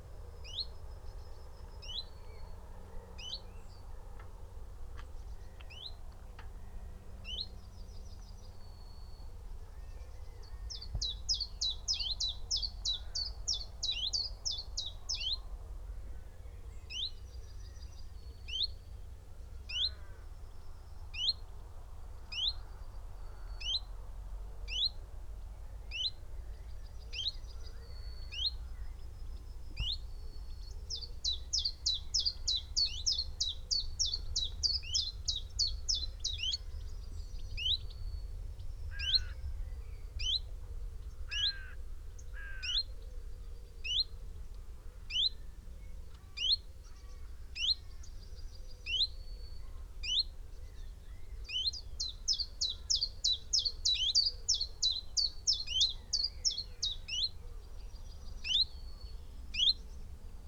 Malton, UK - chiffchaff nest site ...
chiffchaff nest site ... male singing ... call ... in tree ... female calling as she visits nest with food ... xlr sass on tripod to zoom h5 ... bird calls ... song ... from ... yellowhammer ... blackbird ... pheasant ... crow ... whitethroat ... blue tit ... wren ... backgound noise ...